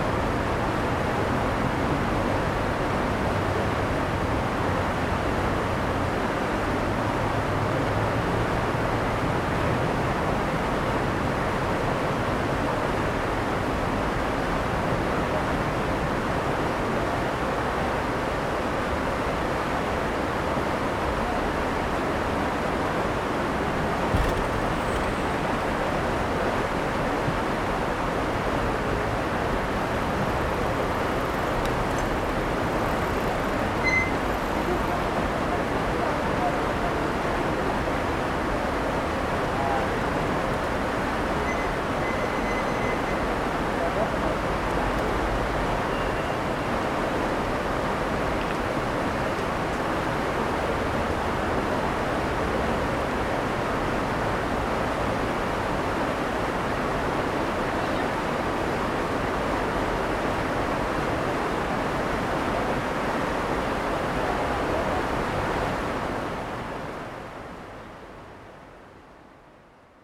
Depuis la passerelle St Laurent réservée aux piétons et cyclistes, les remoux de l'Isère et les passants.